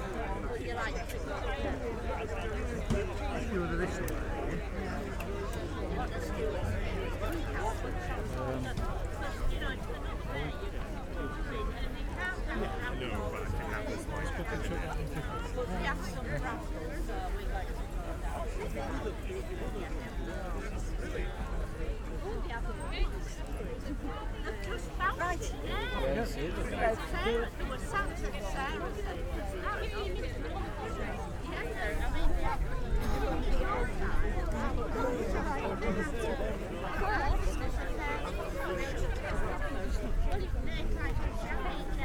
Mackeridge Ln, York, UK - Farndale Show ... Silver band ...
Farndale Show Bilsdale Silver band ... walk pass ... lavalier mics clipped to baseball cap ...